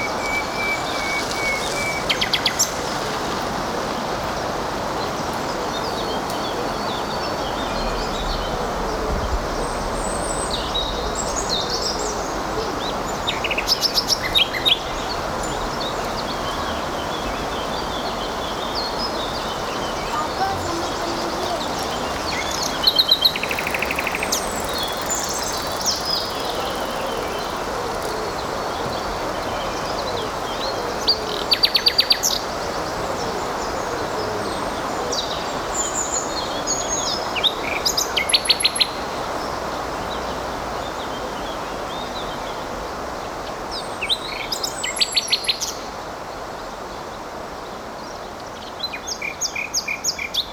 Manlleu, España - El Ter
El río Ter nace en Ulldeter a 2.480 metros de altitud al pie de un circo glaciar en la comarca pirenaica del Ripollés, Cataluña (España), muy cerca de la población de Setcases y, después de una longitud de poco más de 200 km, desemboca en el Mar Mediterráneo aguas abajo de Torroella
20 June 2012, 12:17